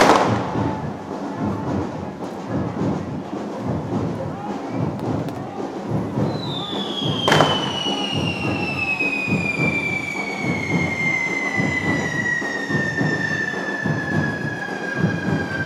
Manlleu, Barcelona, España - Festa del serpent
Festa del serpent